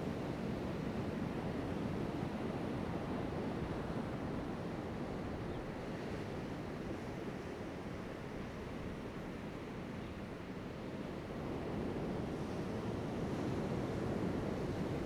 Chaikou Diving Area, Lüdao Township - Diving Area
Diving Area, sound of the waves
Zoom H2n MS +XY